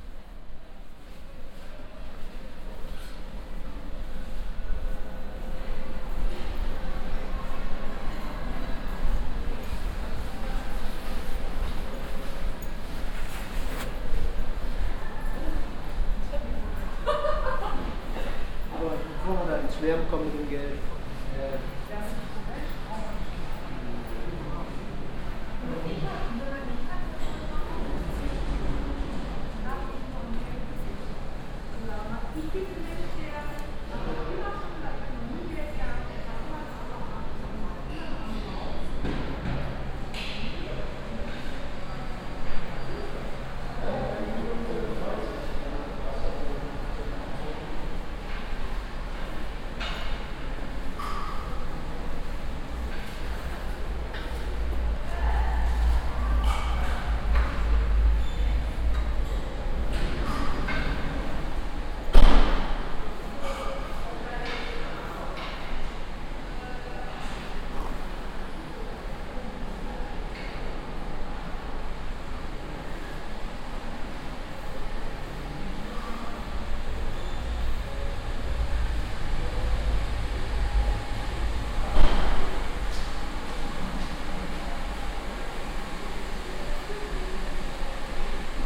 Inside a passage with stores for more expensive clothes, a hair stylist and some doctors. A modernistic, cold reverbing stone and glass architecture.
An artificial laugh in an empty atmosphere. In the distance the clock bell play of the shopping zone.
Projekt - Stadtklang//: Hörorte - topographic field recordings and social ambiences
Essen, Germany, 9 June